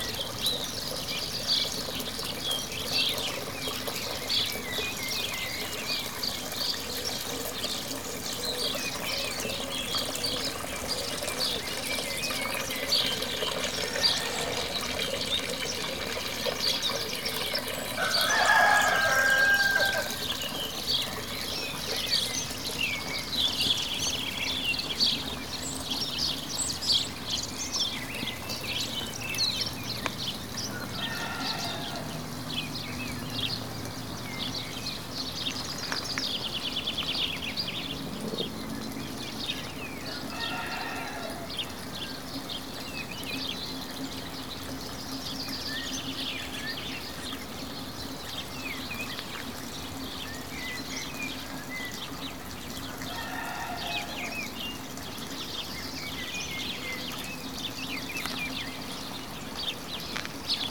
face à l’église à proximité du lavoir.
Les coqs du hameau en appellent au réveil de tous
facing the church near the laundry.
The roosters of the hamlet call for the awakening of all
22 April 2019, 08:29